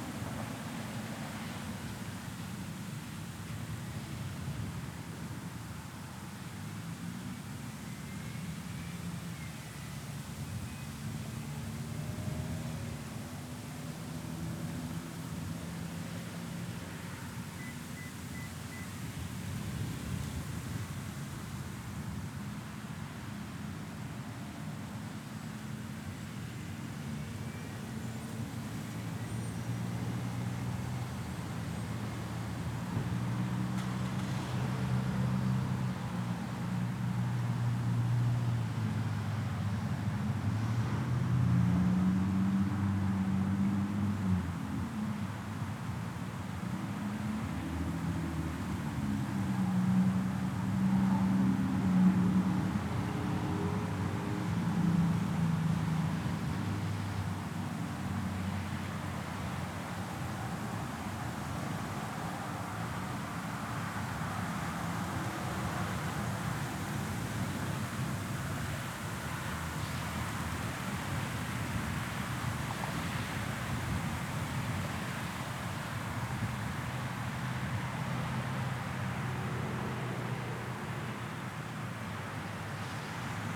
White Bear Lake City Hall - Outside City Hall
Ambient sounds outside of the White Bear Lake City Hall. Highway 61 traffic, people coming and going from city hall, and the clock tower chiming can all be heard.